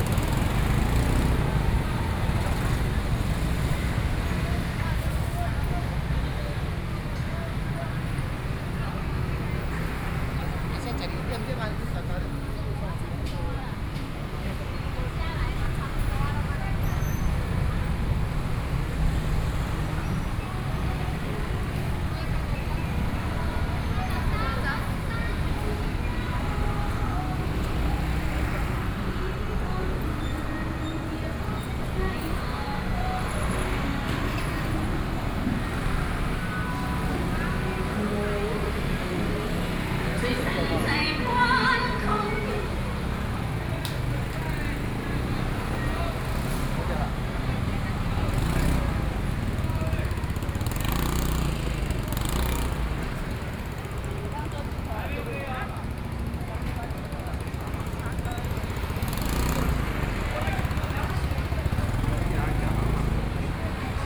Zhongzheng St., 羅東鎮仁和里 - traditional market
Walking through the traditional market, Traffic Sound
Sony PCM D50+ Soundman OKM II
Luodong Township, Yilan County, Taiwan, 1 July 2014, 10:37